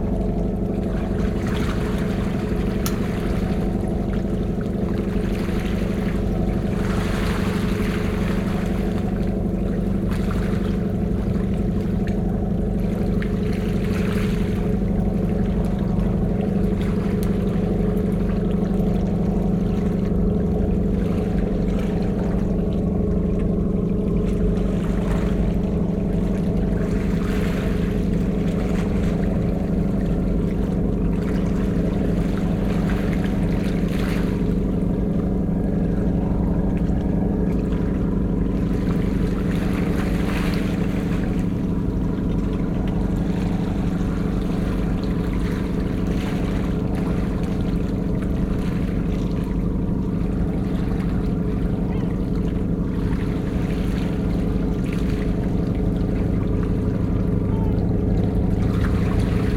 Heybeliada seaside boat, Istanbul
Boat traffic off the coast of Heybeliada island near Istanbul